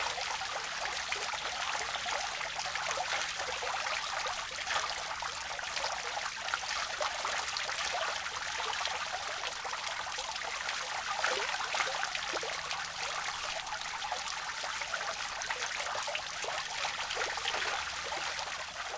Botanischer Garten, Philosophenweg, Oldenburg - fountain
botanical Garden, small fountain
(Sony PCM D50, internal mics 120°)
Oldenburg, Germany, 26 May 2018